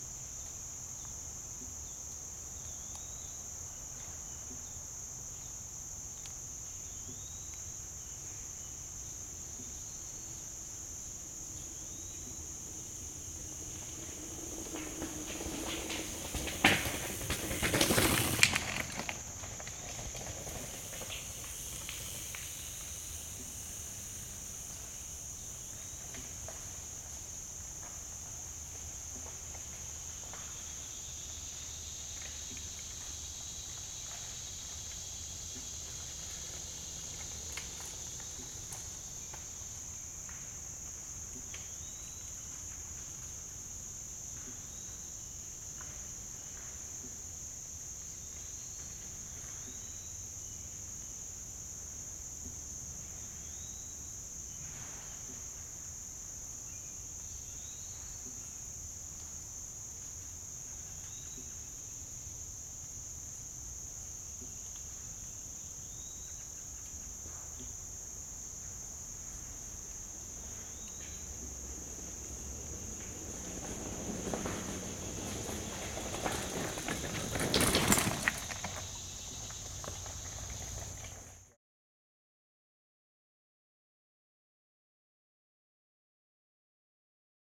Zombie West, Wildwood, Missouri, USA - Zombie West
Mountain biking specters captured descending into a holler of the Zombie West Trail. Trail adjacent to the old Lawler Ford Road, considered to be one the the most haunted roads on Earth.
Recording device cradled in a root wad.